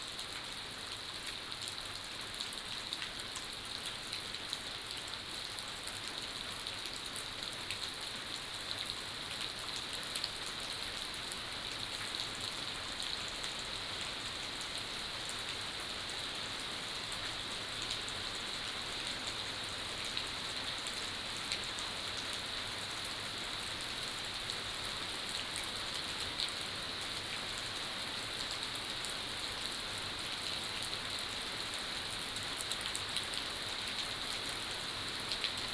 青蛙ㄚ 婆的家, 埔里鎮桃米里 - Rain
Rain
Binaural recordings
Sony PCM D100+ Soundman OKM II